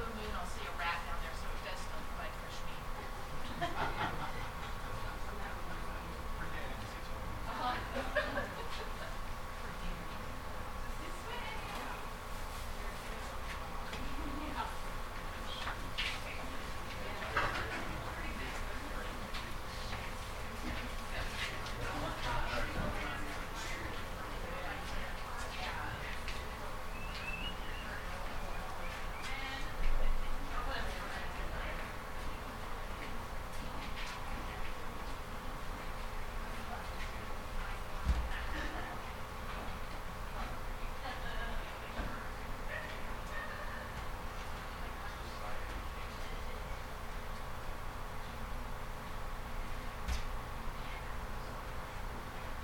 Occidental Ave. S, Seattle, WA, USA - Street Headaches (Underground Tour 2)
Just east of former butcher shop. Following a historical overview of street-level reconfiguration, tourist relates recent news story about cement poured into sewer pipe. A nearby compressor pumps out water. "Bill Speidel's Underground Tour" with tour guide Patti A. Stereo mic (Audio-Technica, AT-822), recorded via Sony MD (MZ-NF810).
12 November 2014, 11:22